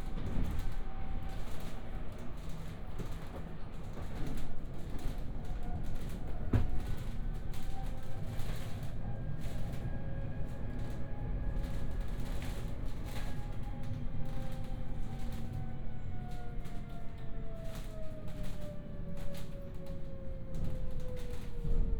Linz, Harbach, Tram - tram ride
tram ride on line 1 towards University
(Sony PCM D50, OKM2)
Oberösterreich, Österreich